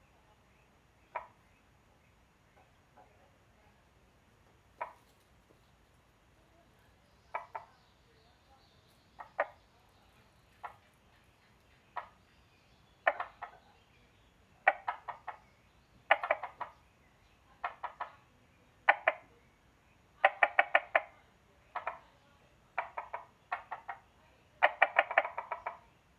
紅瓦厝山居民宿, Puli Township - Small ecological pool
Frogs chirping, Small ecological pool
Zoom H2n MS+ XY